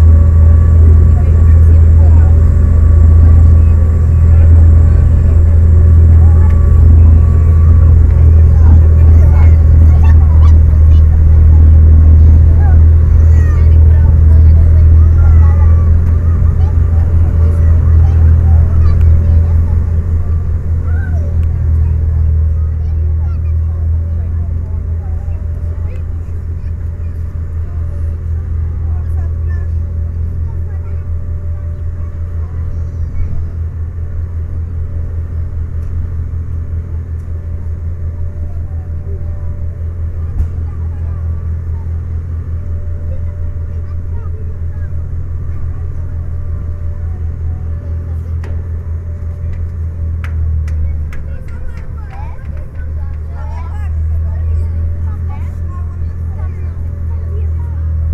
Sètubal, boarding
from Sètubal to Troia, boarding and start
Setubal, Portugal